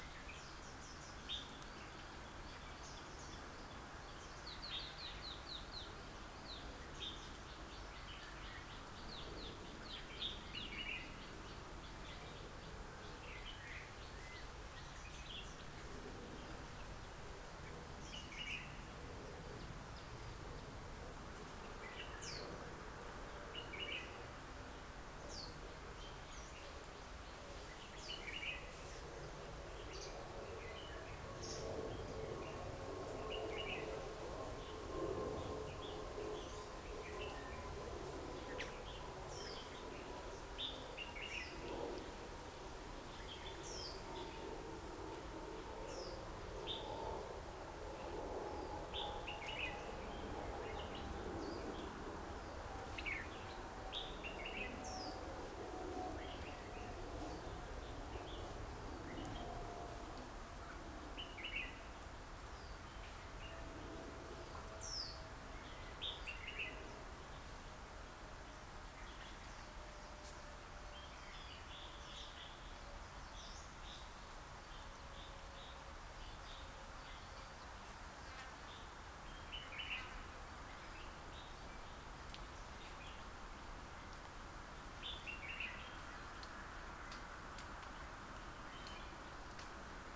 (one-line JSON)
{"title": "Hong Kong Trail Sec., Hong Kong - H017 Distance Post", "date": "2019-01-25 10:56:00", "description": "The seventeenth distance post in HK Trail, located at the east-west side of Pokfulam Village, with a pavilion nearby. You can listen to different kind of bird songs around.\n港島徑第十七個標距柱，位於薄扶林村東北面，附近有一涼亭。你可以聽到不同種類的鳥鳴。\n#Bird, #Cricket, #Bee, #Plane", "latitude": "22.26", "longitude": "114.14", "altitude": "230", "timezone": "Asia/Hong_Kong"}